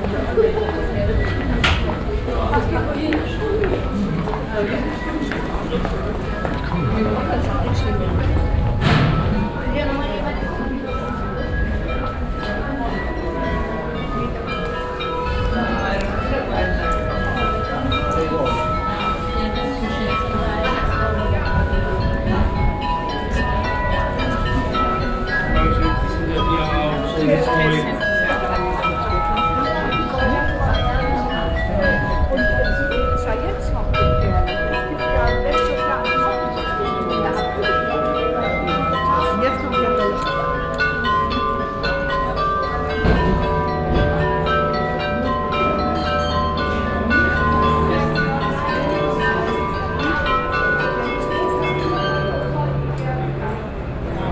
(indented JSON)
{
  "title": "Altstadt, Bremen, Deutschland - bremen, böttcherstraße, carillion house",
  "date": "2012-06-13 16:00:00",
  "description": "Inside a small street walking on front of the carillion house. The sound of the hourly bell play and the conversations of tourists and visitors watching the spectacle.\nsoundmap d - social ambiences and topographic field recordings",
  "latitude": "53.07",
  "longitude": "8.81",
  "altitude": "15",
  "timezone": "Europe/Berlin"
}